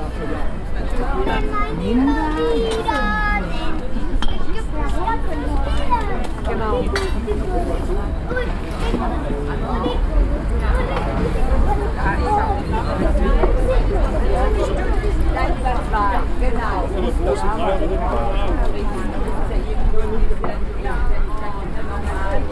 Wochenmarkt, Hamm, Germany - Cafe Stall...
… talking a coffee at a stall at the edge of the market… children playing around their chatting parents… the flower stall across is packing up…
… eine Kaffeepause am Rande des Markts… Kinder spielen um ihre quatschenden Eltern herum… der Blumenstand gegenüber packt zusammen…